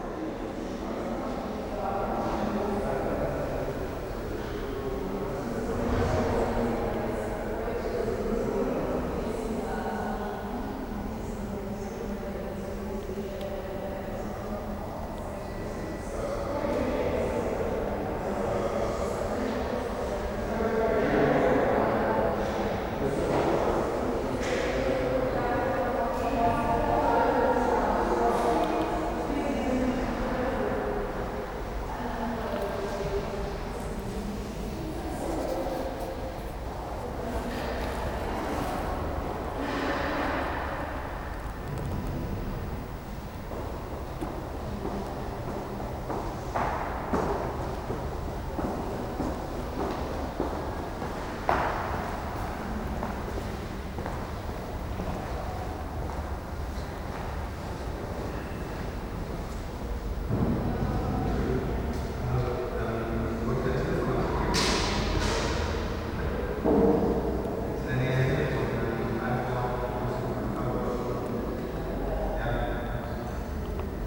Bismarckstraße, Universität Mannheim, Deutschland - Universität Mannheim, Empfangshalle
Empfangshalle, Menschen, Gespräch, Schritte, Hall
Baden-Württemberg, Deutschland, 4 June 2022